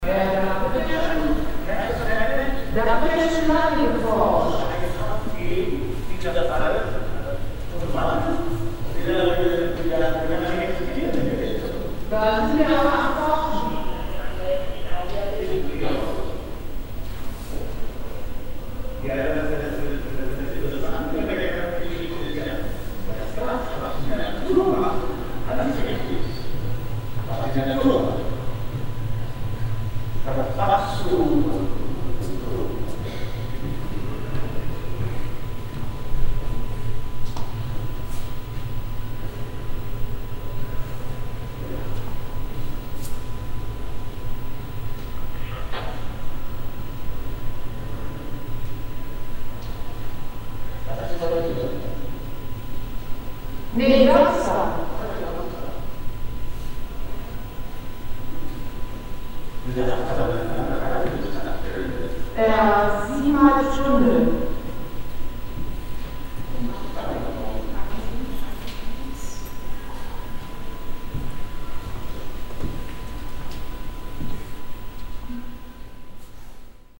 cologne, museum ludwig, media works - cologne, museum ludwig, Isa Genzken - video work
video work by Isa Genzken
media works at contemporary art museum ludwig, cologne
Cologne, Germany